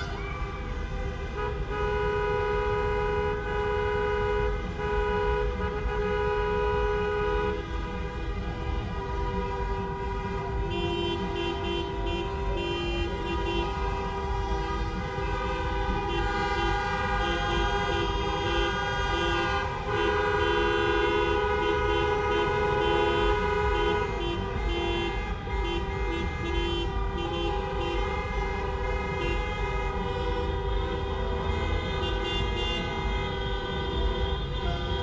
Ulm, Deutschland - Crowd Cars Horn Parade World Champion League Football Germany 02
Es ist geschafft. Für die Fußballbegeiterten in Ulm und ebenso der ganzen Welt ein Event des Jahrhunderts. Ich habe es mir selbstverständlich nicht nehmen lassen und bin nach dem Sieg der deutschen Mannschaft in die City Ulms gefahren um den feiernden Menschen zu lauschen. Verrückt, wie die alle gefeiert haben. Vom Justizgebäude aus bis zumBahnhof war alles komplett verstopft, Menschen auf, in, um Autos herum, Auf Autodächern sitzend, Rufen, Schreiend, Hupend. Aufregend !
Aufgenommen wurde mit einem ORTF Setup (MKH8040) in einen Sounddevices 702T recorder
heim@rt - eine klangreise durch das stauferland, helfensteiner land und die region alb-donau